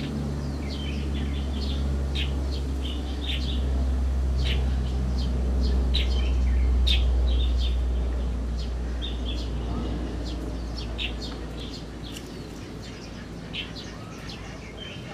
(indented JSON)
{
  "title": "No., Lane, Minxiang Street, East District, Hsinchu City, Taiwan - Lunchtime at Jin Shin Lake",
  "date": "2019-07-26 12:48:00",
  "description": "From within a covered park-bench area overlooking the lake, ducks, other birds and people pass the time, as the lunch hour concludes. Stereo mics (Audiotalaia-Primo ECM 172), recorded via Olympus LS-10.",
  "latitude": "24.78",
  "longitude": "121.01",
  "altitude": "82",
  "timezone": "Asia/Taipei"
}